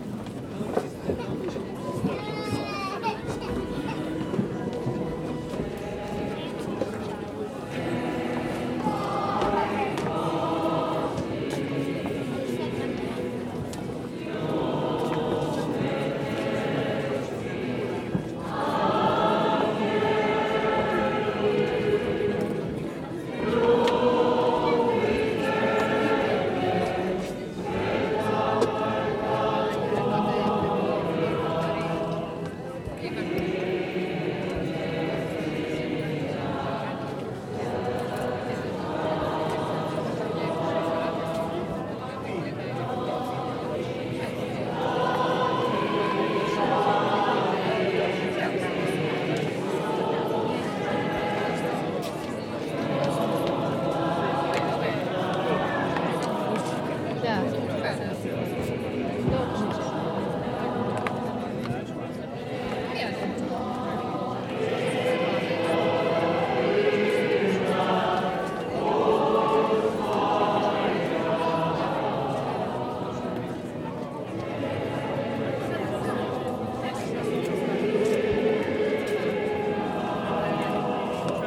voices in front of the church, singing inside